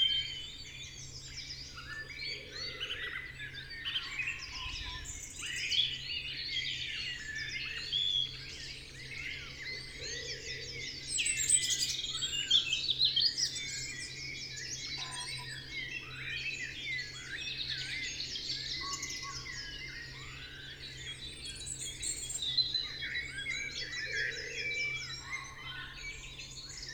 Green Ln, Malton, UK - the wood wakes up ... two ...
the wood wakes up ... two ... pre-amped mics in SASS ... bird call ... song ... from ... pheasant ... wren ... blackbird ... song thrush ... robin ... great tit ... blue tit ... wood pigeon ... tree creeper ... chaffinch ... great spotted woodpecker ... chiffchaff ... buzzard ... background noise and traffic ...
14 April, 05:25